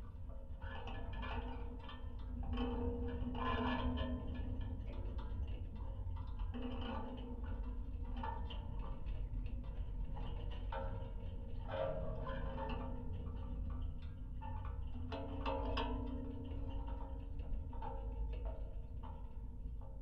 Kiaulupys, Lithuania, metallic construction on water tower
metallic constructions on abandoned water tower. 4 contact microphones